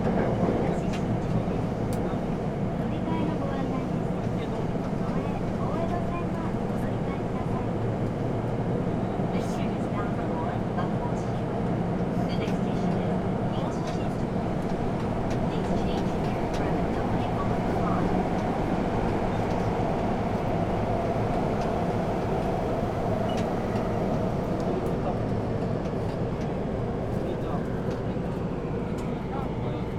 subway ride from Kitasando to Nishiwaseda station.
北足立郡, 日本 (Japan), 28 April 2013